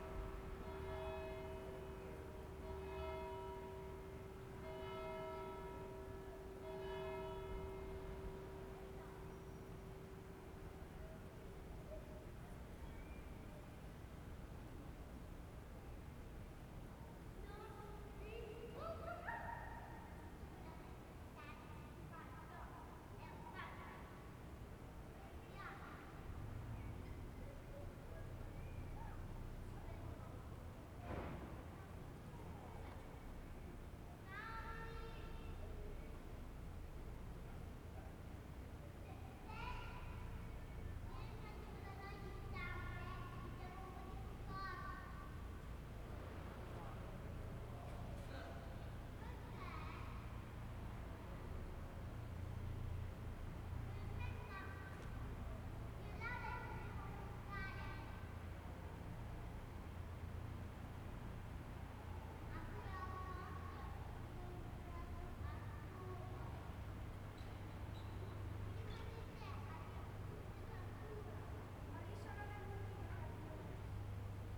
Ascolto il tuo cuore, città. I listen to your heart, city. Several chapters **SCROLL DOWN FOR ALL RECORDINGS** - Three ambiances in the time of COVID19 Soundscape
"Three ambiances in the time of COVID19" Soundscape
Chapter XXVIII of Ascolto il tuo cuore, città. I listen to your heart, city
Monday March 30 2020. Fixed position on an internal terrace at San Salvario district Turin, twenty days after emergency disposition due to the epidemic of COVID19.
Three recording realized at 2:00 p.m., 5:00 p.m. and 8:00 p.m. each one of 4’33”, in the frame of the project Ambiance Confinement, CRESSON-Grenoble research activity.
The three audio samplings are assembled here in a single audio file in chronological sequence, separated by 5'' of silence. Total duration: 13’50”
Torino, Piemonte, Italia, 30 March 2020